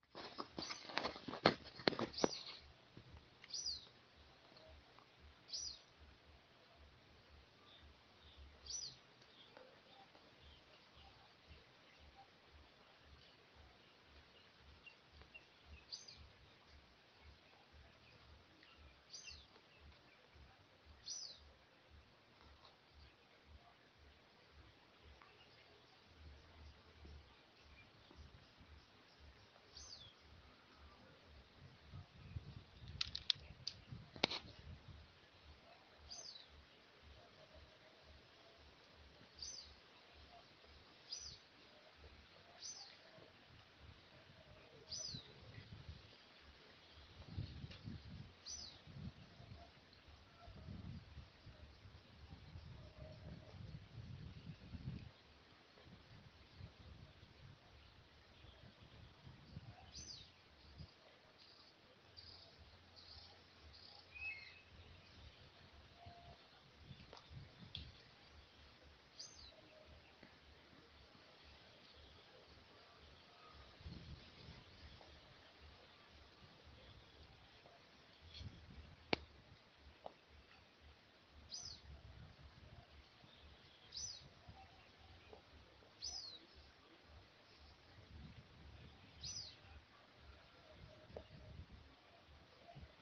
Captação Realizada Fazenda experimental de agroecologia da UFRB, momento pandêmico da COVID-19 sem atividades presenciais.
30 October, 11:11